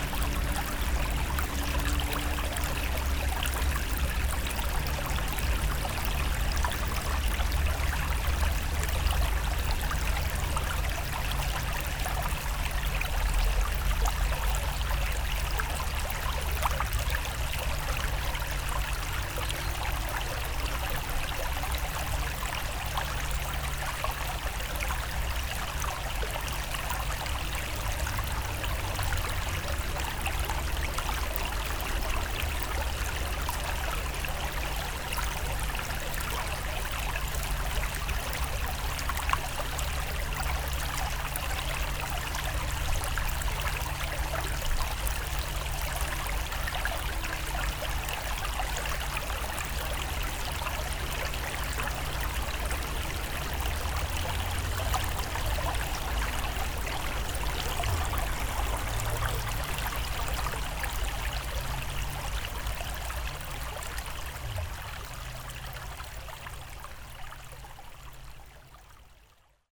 Saint-Aubin-lès-Elbeuf, France - Small river
A small river without name, near the city of Elbeuf.
19 September 2016, 11:45